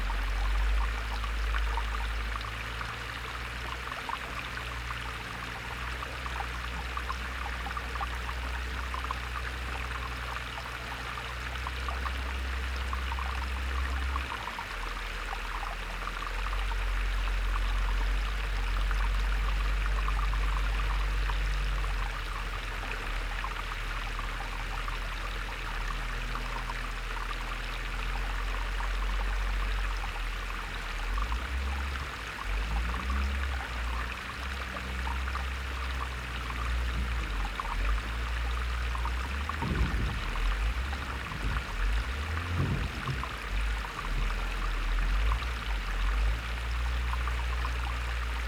Weißwasser, Germany - Water pump 8
Water needs to be constantly pumped from the ground around the mine area to prevent flooding. Along this road there is a pump every 100 meters or so.